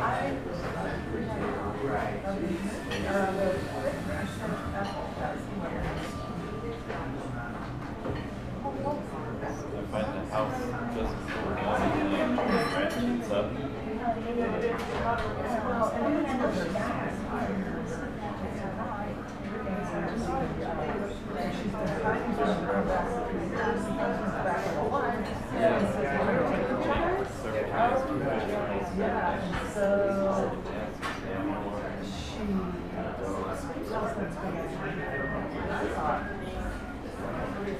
and we finish up breakfast...